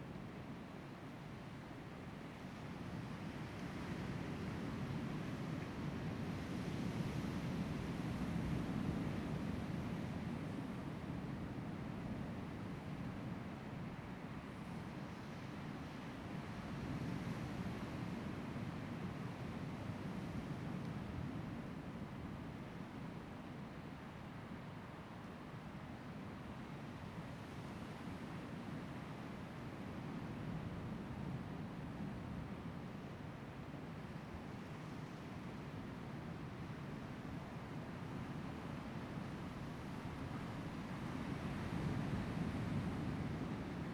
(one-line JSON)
{"title": "Lüdao Township, Taitung County - Environmental sounds", "date": "2014-10-31 08:04:00", "description": "Environmental sounds, sound of the waves\nZoom H2n MS +XY", "latitude": "22.68", "longitude": "121.51", "altitude": "19", "timezone": "Asia/Taipei"}